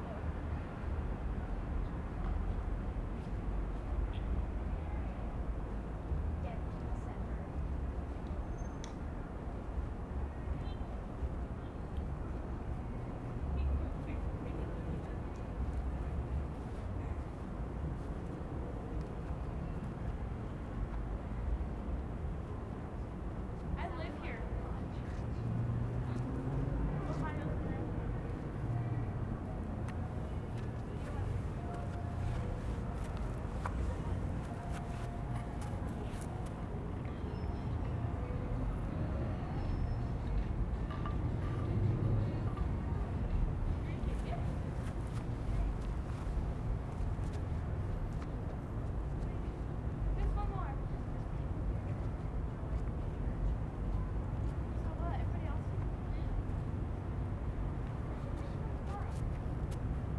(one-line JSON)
{"date": "2018-06-27 20:55:00", "description": "the abuse these beautiful peoples suffered and so many countless others in similar situations as the americas and other places were colonized by the christian terrorists of centuries previous and the current times can not be fathomed in the breadth and width of its brutality and heartlessness. they amd other non white non christian people were forced into slave labor to build disgusting places like this that stand and are celebrated to this day as symbols and realities of the ongoing settler colonialist genocide.", "latitude": "35.69", "longitude": "-105.94", "altitude": "2136", "timezone": "America/Denver"}